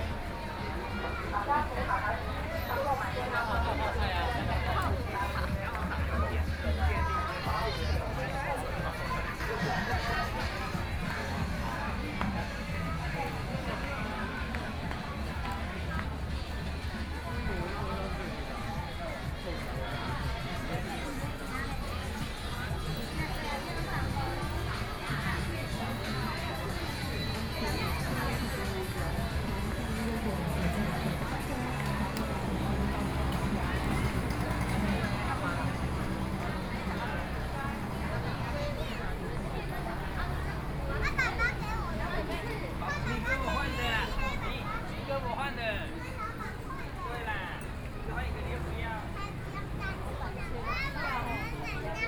Minsheng Rd., 羅東鎮集祥里 - Night Market
walking in the Night Market, Traffic Sound